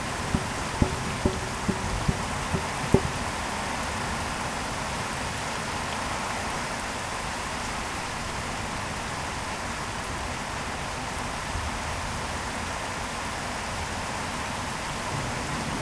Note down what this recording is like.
Heavy rain and distant thunder. Dropping water makes some drum sounds, Pluie d’été et tonerre lointain. Des gouttes de pluis genèrent un bruit de percussion